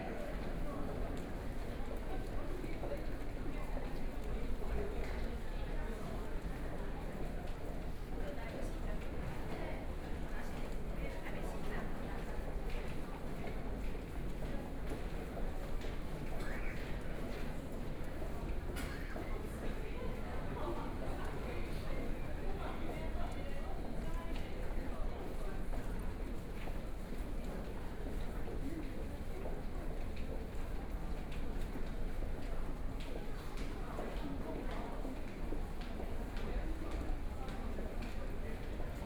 From the beginning of the platform, Then through the underground passage, Out of the station
Binaural recordings
Zoom H4n+ Soundman OKM II + Rode NT4
24 February, 09:42